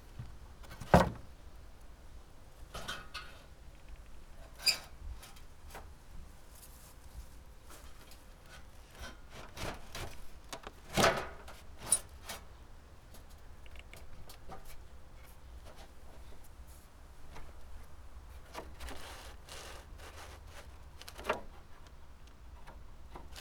Srem, Andrew's house - wood pile
a pile of different wooden planks, boards, rungs, metal rods